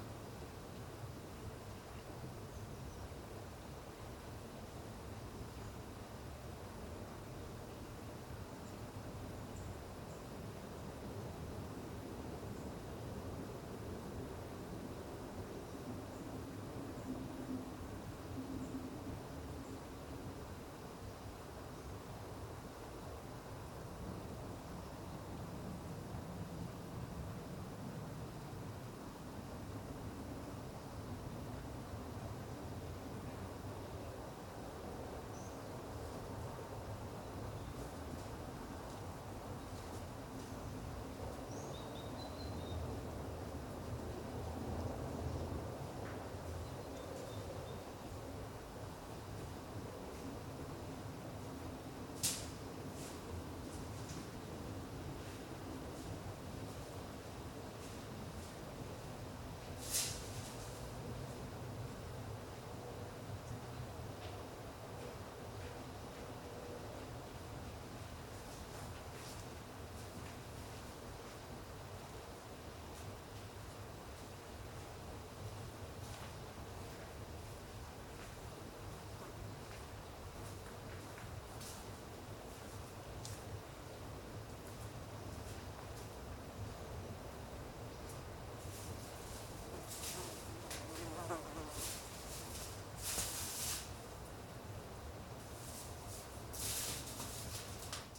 Recorded with Zoom H5. Placed just off a walking path.
There is a bird singing a few songs and some other animal making some 'wood knocking' type of sound.
Distant traffic, train and power plant sounds.
Dresden, Germany, August 2018